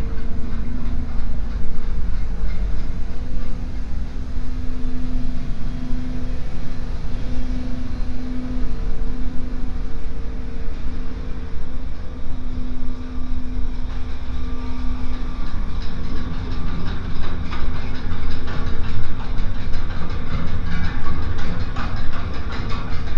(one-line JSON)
{"title": "Ferry Engine Room, Feock, Cornwall, UK - Pulling Chains and Engine Room (Binaural Recording)", "date": "2013-03-05 15:00:00", "description": "A short binaural recording of the chain pulleys and the engine room, from inside the Estuary Ferry.", "latitude": "50.22", "longitude": "-5.03", "timezone": "Europe/London"}